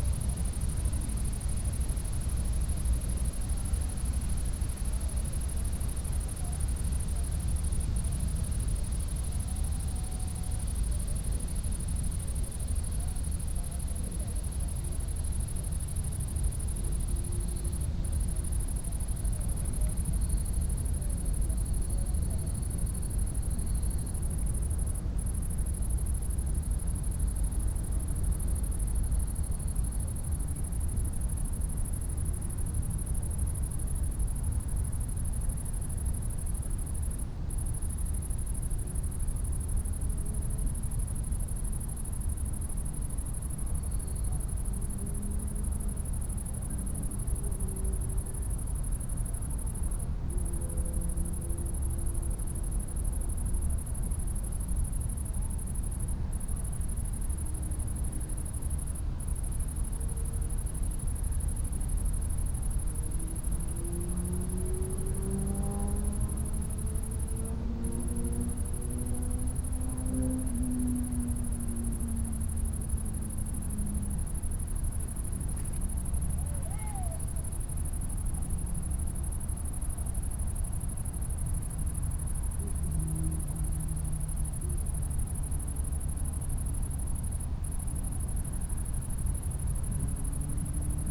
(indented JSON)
{
  "title": "Rhein river bank, Jugendpark, Köln, Deutschland - ship drone, cricket, wind",
  "date": "2019-07-18 20:25:00",
  "description": "rythmic ship drone, but the ship is already a kilometer away. a cricket close\n(Sony PCM D50, Primo EM172)",
  "latitude": "50.96",
  "longitude": "6.99",
  "altitude": "39",
  "timezone": "Europe/Berlin"
}